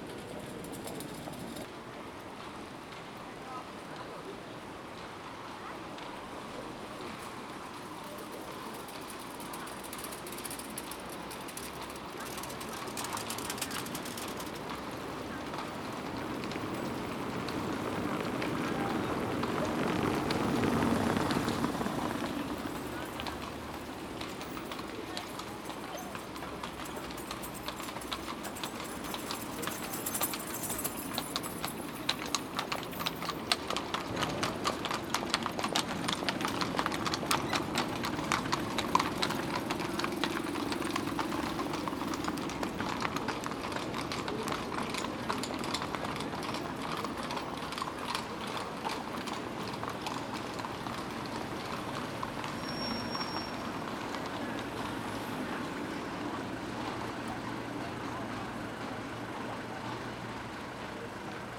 The horse-drawn carriages Fiaker crossing the place. You hear the noise of cars, bikes and Fiaker on cobbled pavement.